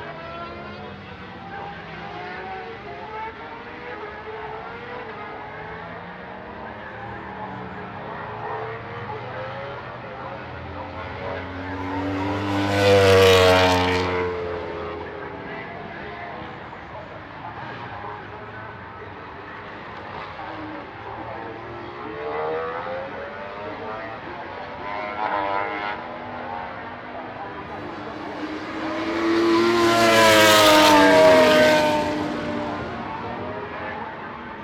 Unnamed Road, Derby, UK - British Motorcycle Grand Prix 2004 ... warm up ...
British Motorcycle Grand Prix 2004 ... warm up ... one point mic to minidisk ...
25 July 2004, 10:10